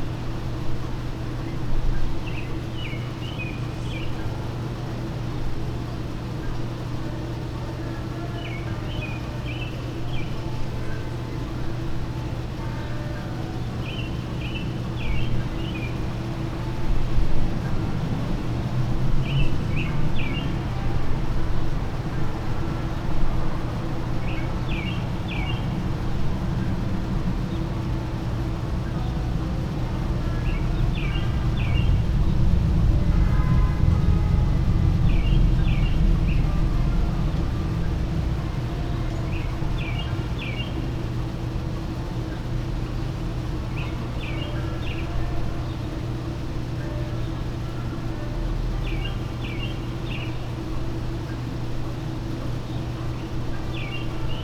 Grace St NW, Washington, DC, USA - Sounds from Georgetown, DC
The sounds of my patio outside the music studio in our apartment in Georgetown. Normally there are sounds of music from street musicians, chatter from conversations, and the traffic sounds from Wisconsin Ave and M st. Faintly, one can still hear these sounds as a few people pass by, as well as music coming out of the studio. The sounds were peaceful with birds chirping and a light breeze gently waving a flag from a building next door.
District of Columbia, United States of America, April 2020